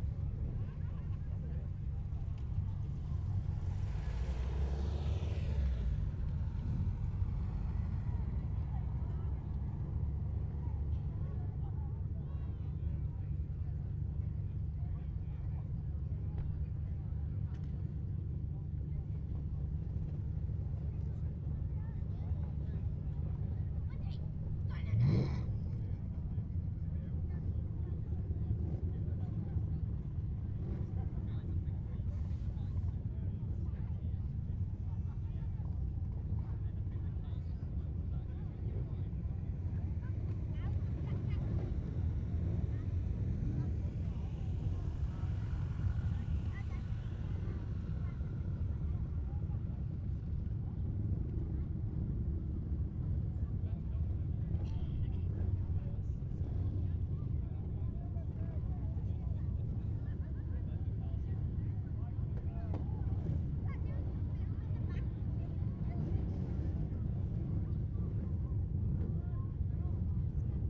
Bridlington, Park and Ride, Bridlington, UK - race the waves ... south prom ... bridlington ...
race the waves ... south prom bridlington ... dpa 4060s clipped to bag to mixpre3 ... cars and bikes moving from car park holding to beach ...
Yorkshire and the Humber, England, United Kingdom, 18 June 2022